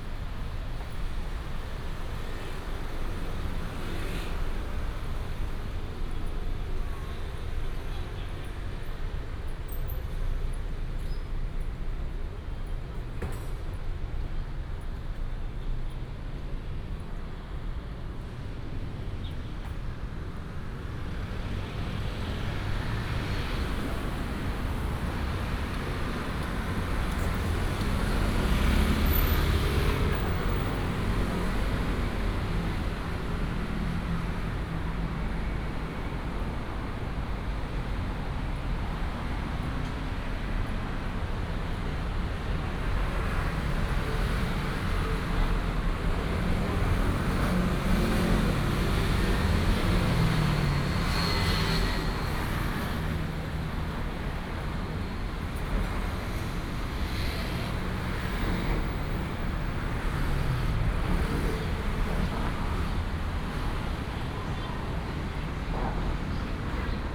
Tzu Wen Junior High School, Taoyuan Dist., Taoyuan City - Walking on the road
Traffic sound, Walking on the road